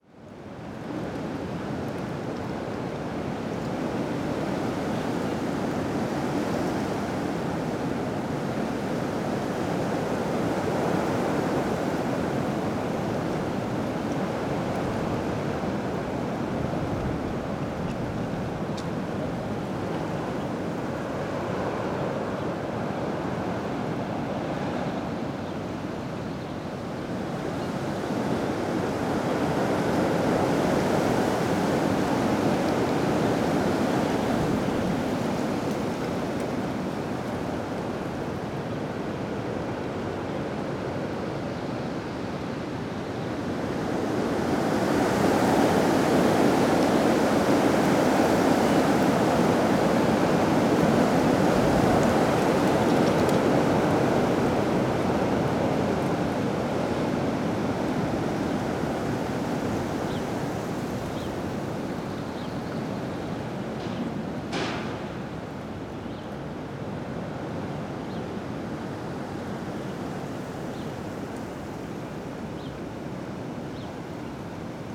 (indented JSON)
{
  "title": "Berlin, Görlitzer Ufer - wind in trees",
  "date": "2011-04-08 19:30:00",
  "description": "strong wind in trees at Görlitzer Park, Berlin",
  "latitude": "52.49",
  "longitude": "13.44",
  "altitude": "35",
  "timezone": "Europe/Berlin"
}